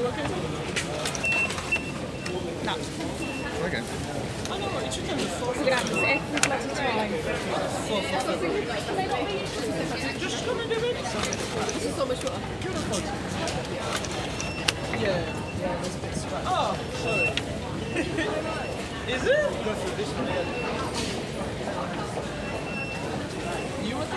London Borough of Camden, Greater London, Vereinigtes Königreich - King's Cross St. Pancras tube station, Western ticket hall - Automatic barriers
King's Cross St. Pancras tube station, Western ticket hall - Automatic barriers. People checking in: signals, chatter, steps, mechanical sounds from card readers and barriers.
[Hi-MD-recorder Sony MZ-NH900 with external microphone Beyerdynamic MCE 82]